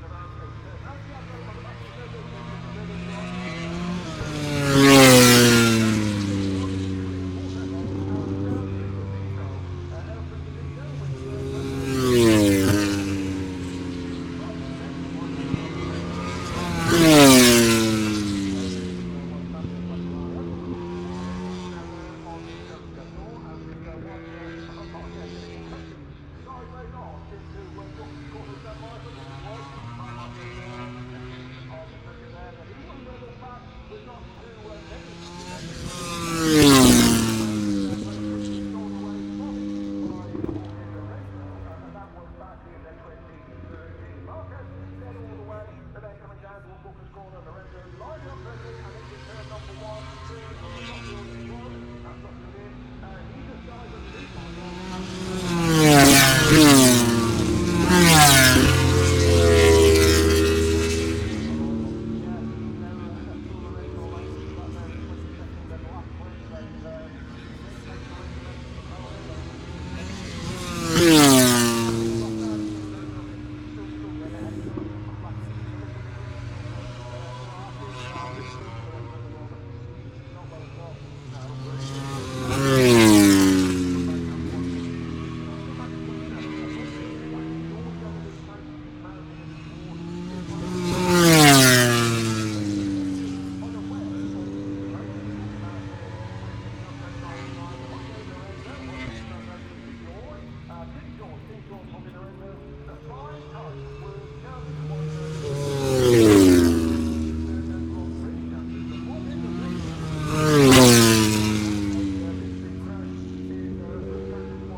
Silverstone Circuit, Towcester, UK - british motorcycle grand prix 2019 ... moto grand prix ... fp3 ...
british motorcycle grand prix 2019 ... moto grand prix ... free practice three ... maggotts ... lavaliers clipped to bag ...